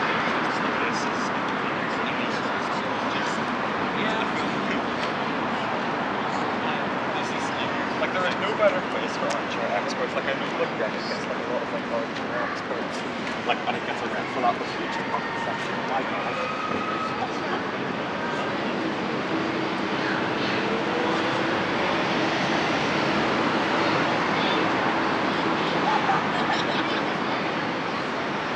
Donegall Square N, Belfast, UK - Belfast City Hall-Exit Strategies Summer 2021
Recording of the green space in front of the city hall with people walking, sitting, and/or talking. In the background there are some vehicles passing and a few moments are birds flying.